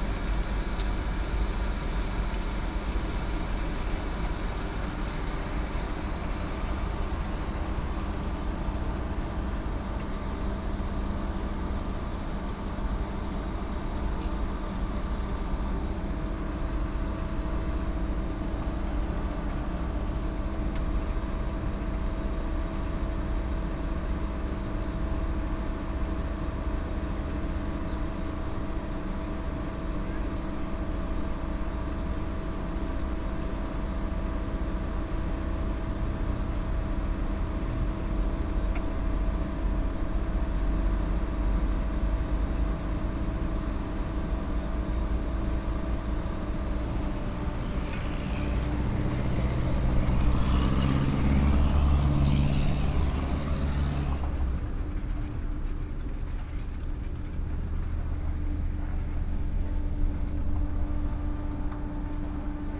WLD Cu MTV Amsterdam outdoor aircosystem NDSM yard
standing close to the outdoor airco system (I think) of the MTV music/radio studio's, a group of young scaters passing by on their way to the ferry.
Amsterdam, The Netherlands, 18 July, ~18:00